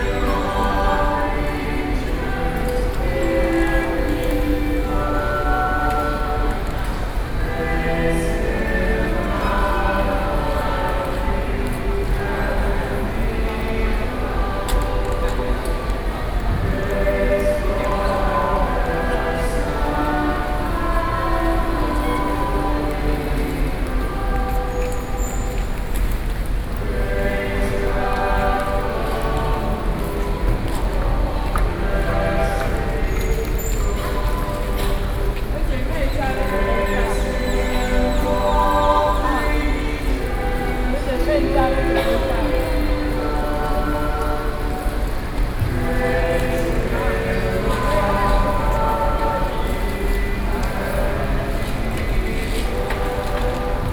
New Taipei City, Taiwan - in the hospital
8 November